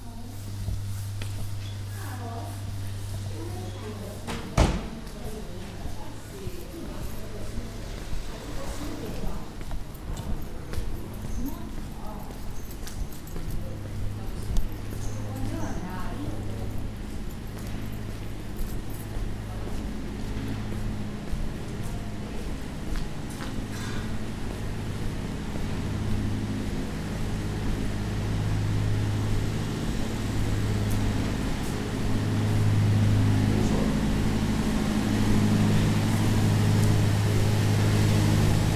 short walk from my studio to piazza cisterna, then piazza cucco, back to piazza cisterna and back to the studio. Zoomq3hd